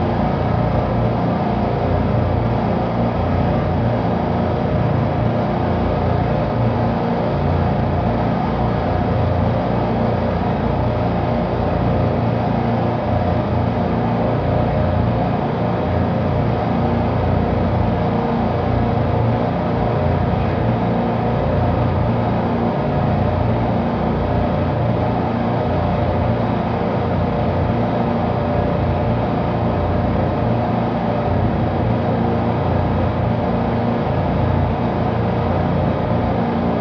Venedig, Italien - Venice Biennale - Australian Pavillion
At the Venice Biennale 2022 inside the Australian Pavillion - the sound of a a live performed guitar drone. The work DESASTRES is an experimental noise project that synchronises sound with image. The work takes the form of a durational solo performance as installation. Marco Fusinato will be performing during the opening hours of the Biennale – a total of two hundred days. Fusinato will perform live in the Pavilion using an electric guitar as a signal generator into mass amplification to improvise slabs of noise, saturated feedback, and discordant intensities that trigger a deluge of images onto a freestanding floor-to-ceiling LED wall.
international ambiences
soundscapes and art enviroments